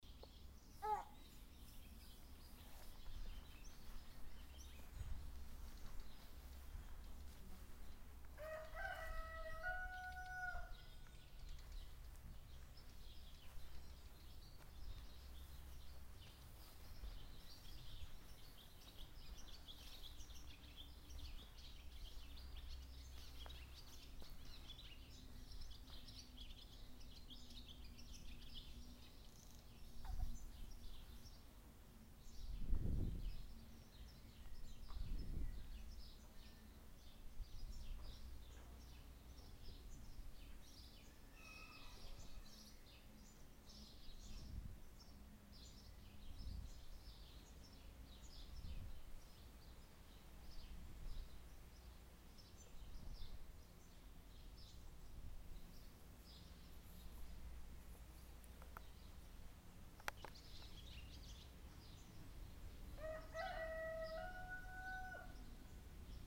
rural atmosphere, afternoon
recorded june 1, 2008 - project: "hasenbrot - a private sound diary"